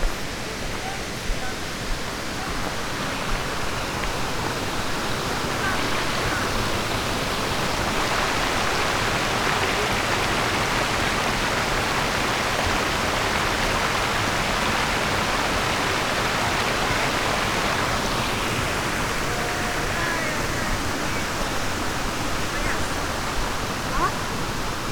studenice, slovenia - confluence, three springs
Poljčane, Slovenia, 1 May 2013